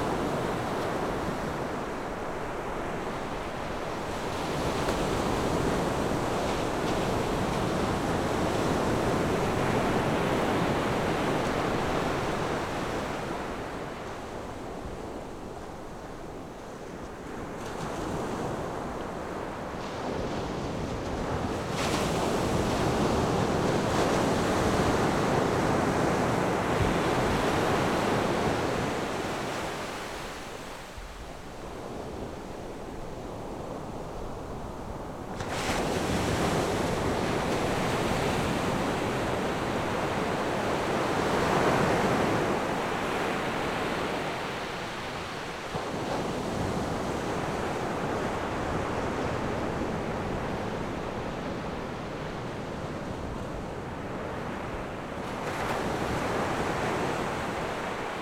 塘後沙灘, Beigan Township - In the beach
Sound wave, In the beach
Zoom H6 +Rode NT4